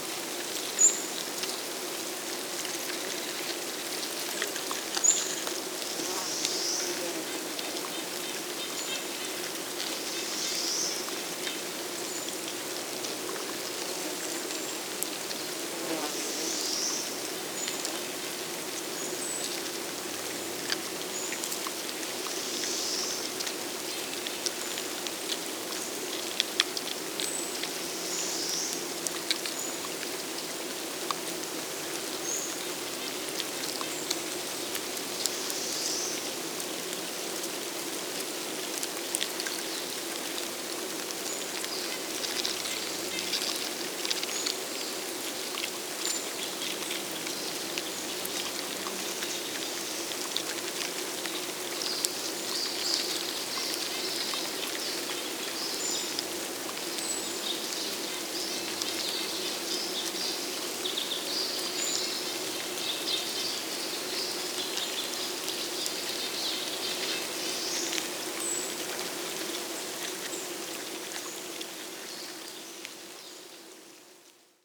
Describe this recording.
Very active thatching ant mound, with birds and forest ambience. Ants close-miked with LOM omni capsules, into Tascam DR-680mkII recorder.